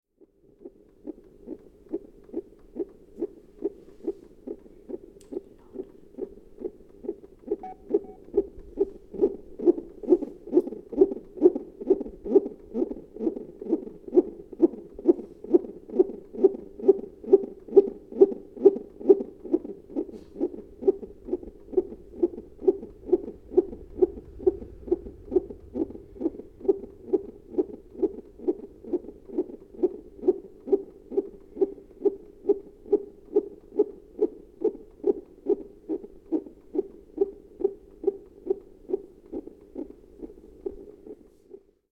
müllenhoffstr., birthplace - müllenhoffstr., birthplace, heartbeat
14.09.2008 23:30
heartbeat before birth